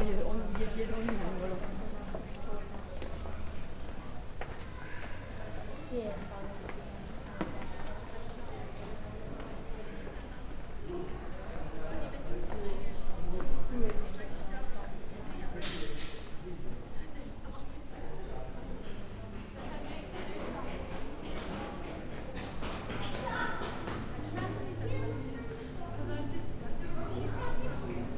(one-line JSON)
{"title": "Perugia, Italy - in the stairs", "date": "2014-05-23 13:04:00", "description": "people walking and speaking, a mendicant softly singing on a machine drone.", "latitude": "43.11", "longitude": "12.39", "altitude": "463", "timezone": "Europe/Rome"}